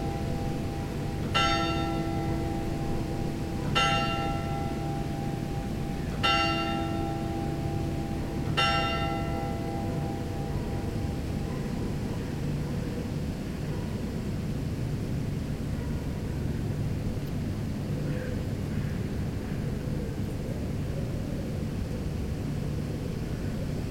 Into the Mettray prison, where children were sequestrated in the past, this is the sound of the chapel. It's not a very good sound as the bell is very enclosed into the tower, but it's important to know that it was the exact sound that children convicts were hearing.
France, 12 August 2017